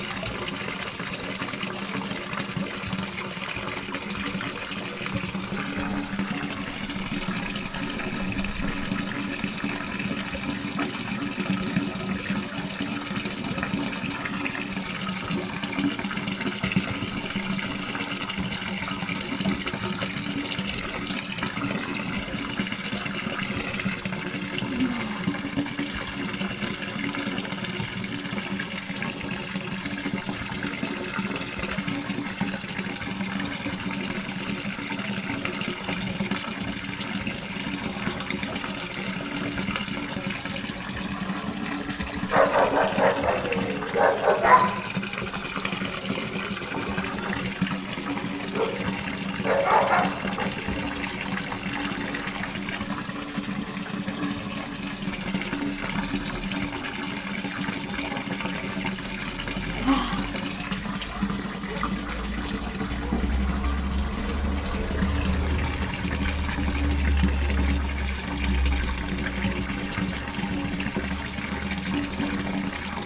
caprauna, piazza sant antonio, village water fountain
soundmap international: social ambiences/ listen to the people in & outdoor topographic field recordings